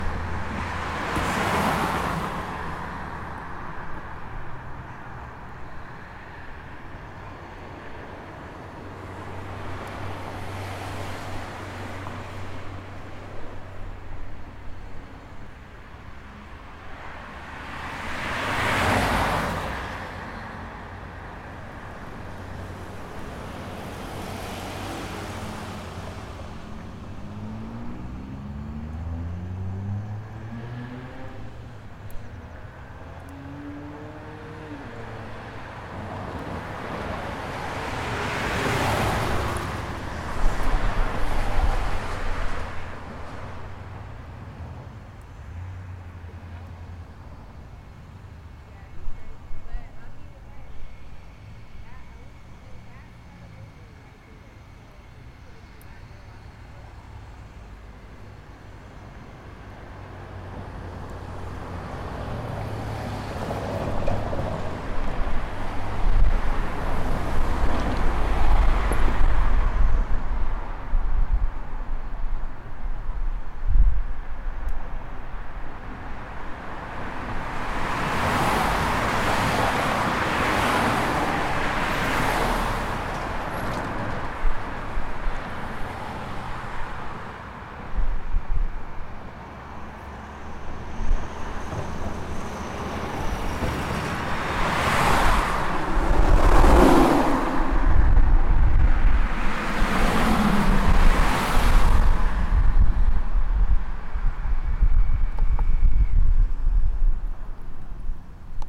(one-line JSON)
{"title": "Seminary Av:Outlook Av, Oakland, CA, USA - Highway", "date": "2019-10-26 04:00:00", "description": "I sat alongside a bend where cars tend to zip by and recorded their sounds with a Zoom H4n recorder.", "latitude": "37.78", "longitude": "-122.18", "altitude": "44", "timezone": "America/Los_Angeles"}